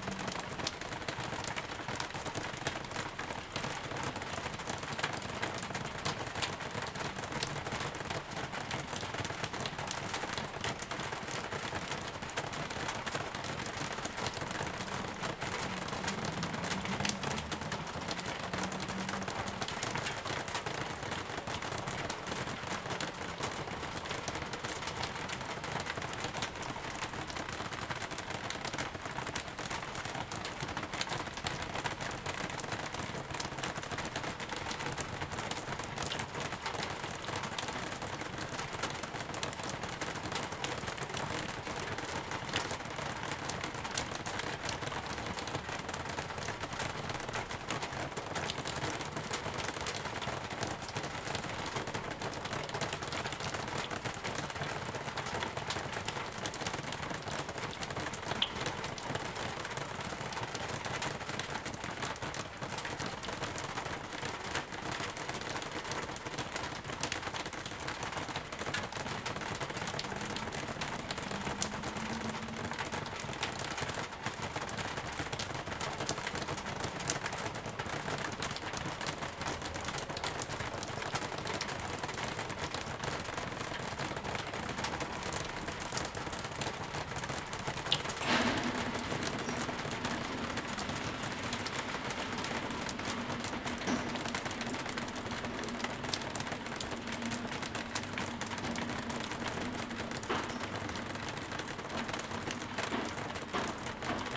Ascolto il tuo cuore, città, I listen to your heart, city. Several chapters **SCROLL DOWN FOR ALL RECORDINGS** - Three ambiances April 27th in the time of COVID19 Soundscape
"Three ambiances April 27th in the time of COVID19" Soundscape
Chapter LVIII of Ascolto il tuo cuore, città. I listen to your heart, city
Monday April 27th 2020. Fixed position on an internal terrace at San Salvario district Turin, forty eight days after emergency disposition due to the epidemic of COVID19.
Three recording realized at 11:00 a.m., 6:00 p.m. and 10:00 p.m. each one of 4’33”, in the frame of the project (R)ears window METS Cuneo Conservatory) (and maybe Les ambiances des espaces publics en temps de Coronavirus et de confinement, CRESSON-Grenoble) research activity. Similar was on April 25th
The three audio samplings are assembled here in a single audio file in chronological sequence, separated by 7'' of silence. Total duration: 13’53”